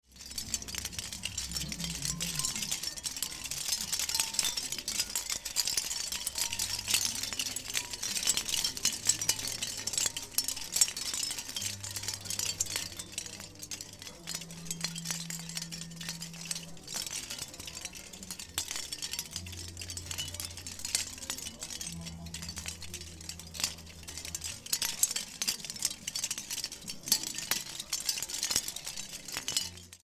bonifazius, bürknerstr. - muschellampe
20.02.2009 17:00 muschellampe / shell lamp
Berlin, Deutschland